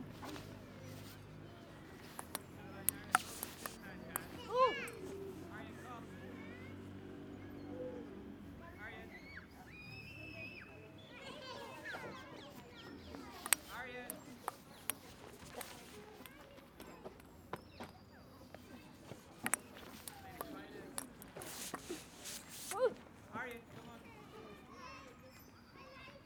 Gladstone Park, London - ping pong in the park